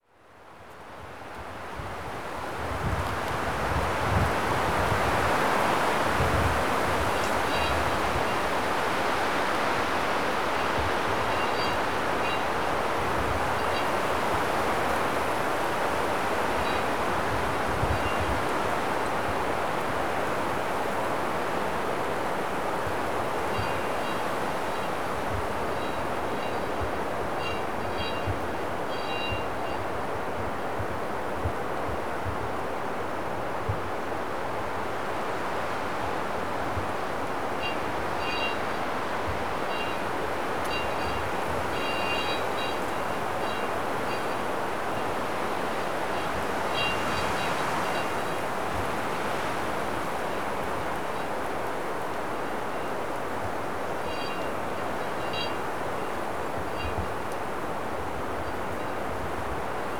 Sasino, coastal forest strip - rattle in the forest
while walking in the woods i noticed these distant clanks breaking through strong wind and leaf rattle. couldn't figure out what it was, a few minute search was not successful. i was walking around it but couldn't quite pinpoint it. a sound secret of the coastal forest.
województwo pomorskie, Polska, 29 June